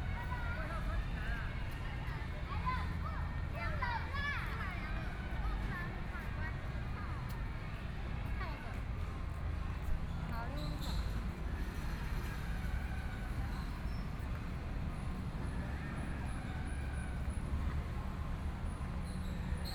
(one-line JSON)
{"title": "內湖區港富里, Taipei City - Sitting in the park", "date": "2014-04-12 21:30:00", "description": "Sitting in the park, Fireworks sound, Footsteps, Traffic Sound\nPlease turn up the volume a little. Binaural recordings, Sony PCM D100+ Soundman OKM II", "latitude": "25.08", "longitude": "121.58", "altitude": "14", "timezone": "Asia/Taipei"}